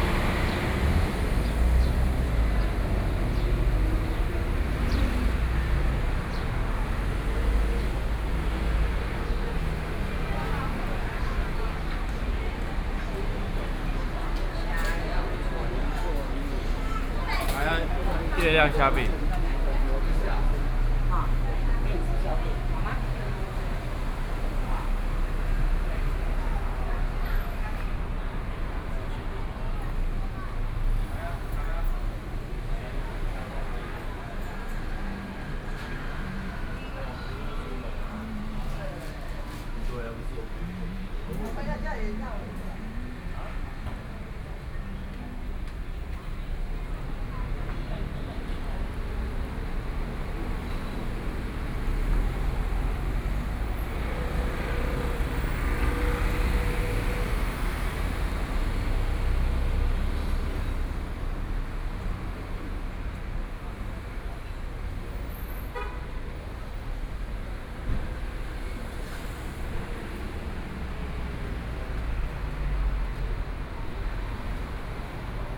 Sec., Jiaoxi Rd., Jiaoxi Township - Walking on the road
Walking on the road, Various shops voices, Traffic Sound
Sony PCM D50+ Soundman OKM II